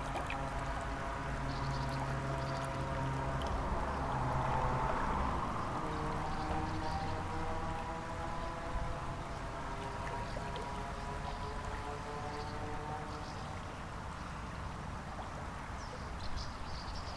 Via Rhôna sous le pont du Lit du Roi. Quelques bruissements des eaux du Rhône et les hirondelles en chasse.
France métropolitaine, France, July 2021